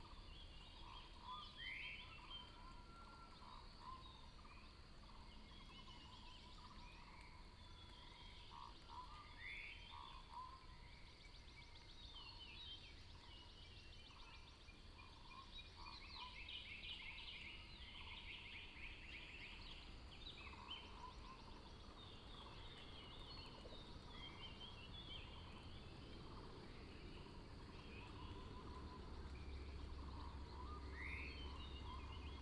水上巷, 埔里鎮桃米里, Nantou County - early morning
Bird sounds, Crowing sounds, Morning road in the mountains
Nantou County, Puli Township, 水上巷